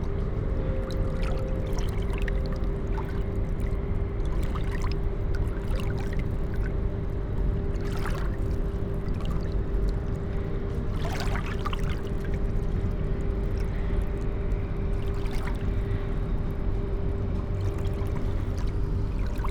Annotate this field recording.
river Spree with free overflowing waves, pale green frog jumps in front of my eyes, flops itself into square hole in concrete surface, after few moments she is out again, sitting, focusing on descended colorful microphone bubbles, after that she's gone ... crows, cement factory